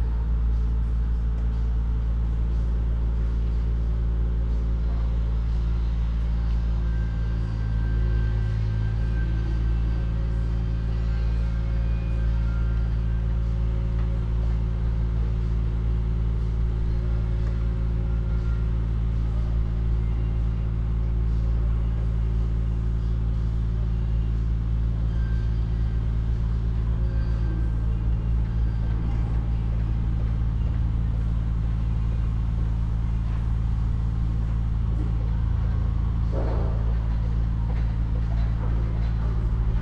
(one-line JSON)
{"title": "Roman-Herzog-Straße, München, Deutschland - Major Construction Site Freiham", "date": "2021-09-30 15:45:00", "description": "A new district of Munich is being built in Freiham.", "latitude": "48.14", "longitude": "11.41", "altitude": "529", "timezone": "Europe/Berlin"}